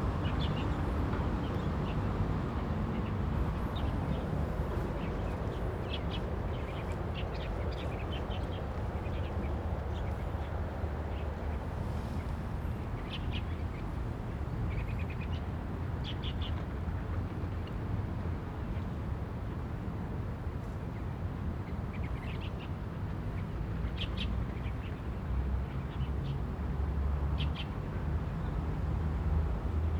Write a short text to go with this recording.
Birds singing, Traffic Sound, Rode NT4+Zoom H4n